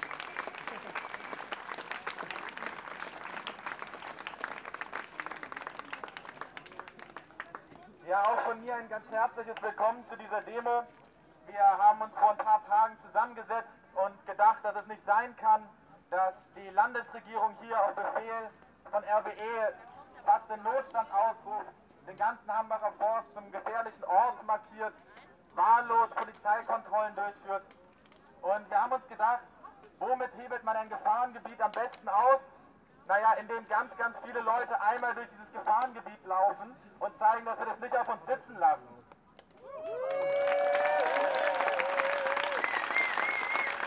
preparation for demonstration, a speaker announces some requirements and program details.
(Sony PCM D50)